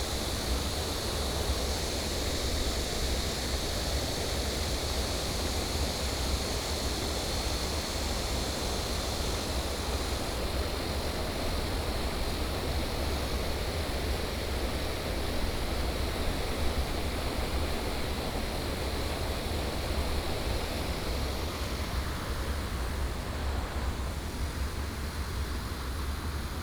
撒烏瓦知部落, 大溪區Taoyuan City - Agricultural irrigation waterway
Agricultural irrigation waterway, Cicada and bird sound